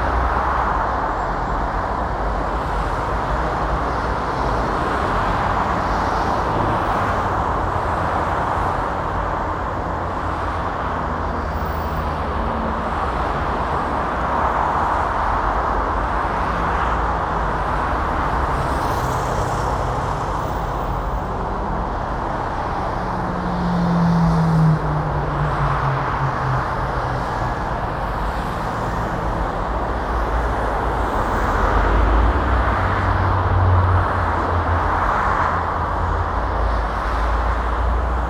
Grunewald, Berlin, Germany - Directly above the motorway traffic
On the footbridge above the motorway. Traffic is very busy. It is Sunday so all cars, no trucks.